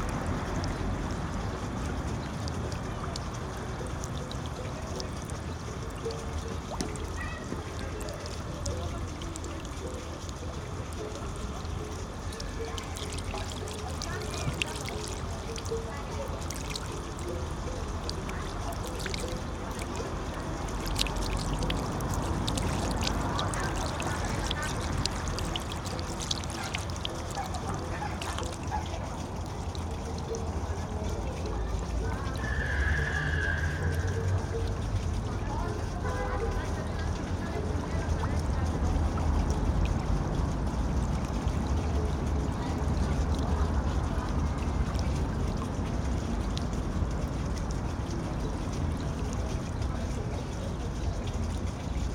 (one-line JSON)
{"title": "Prva gimnazija, Maribor, Slovenia - fountain and mandolin", "date": "2012-06-14 17:33:00", "description": "up close at the fountain in the small park facing the prva gimnazija, as some skaters sitting under a nearby tree strummed a few chords on a mandolin.", "latitude": "46.56", "longitude": "15.65", "altitude": "278", "timezone": "Europe/Ljubljana"}